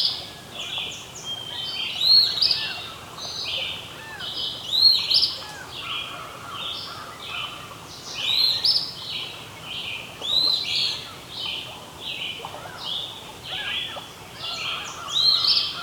Kagawong, ON, Canada - Dawn chorus
Early morning birdsong, Lake Huron waves in distance. Recorded with LOM Uši Pro omni mics and Tascam DR-680mkII. EQ and levels postprocessing.